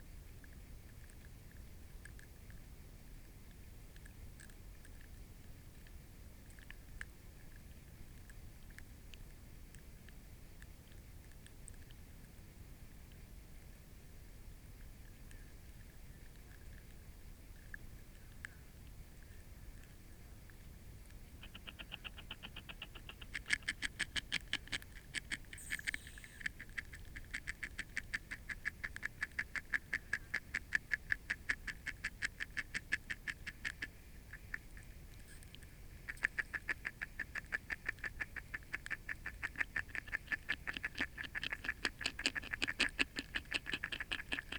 Jens' Place, Rogovo Rema, Mikro Papingo - Tadpoles
I'd be fascinated to know what is going on here. Recorded with a hydrophone I can hear tadpoles nibbling from a spawn covered branch and tail twitching, but I can't place the sounds which appear to be air expulsion. It was a glorious couple of hours and this clip is just a short example. I can also hear audible signals of cicadas and frogs vocalising.